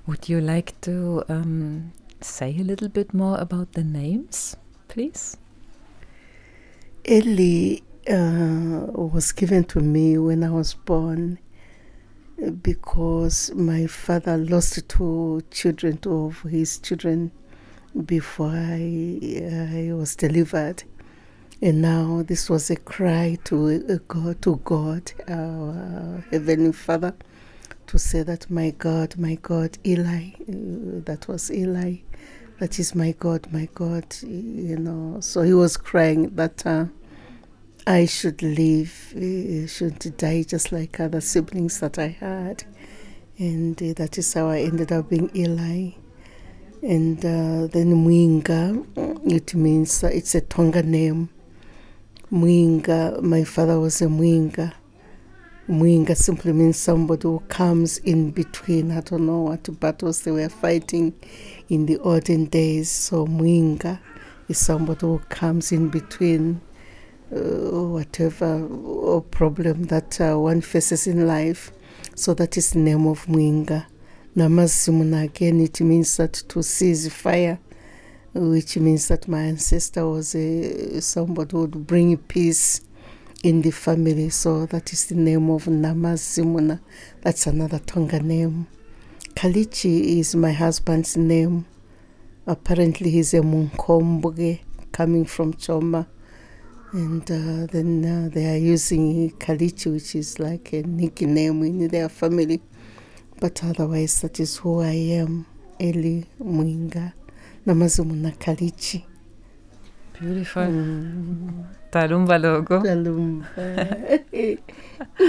Trained as a nurse, and a leprosy controller in her district, Mrs Kalichi didn’t have plans leaving her job and ordinary family life. The male folk among the royal family refused to take up the vacant position of the chief... Eli Mwiinga Namazuminana Kalichi became Chiefteness Mwenda of Chikankata in 2006. In this interview, she unravels for us why she took the step that her brothers refused, and what it meant for her life to take up the traditional leadership position as a woman, and become the first ever Chiefteness in Southern Province… Today, Chiefteness Mwenda is i.a. Deputy Chair of the house of Chiefs...
Southern Province, Zambia, 4 September 2018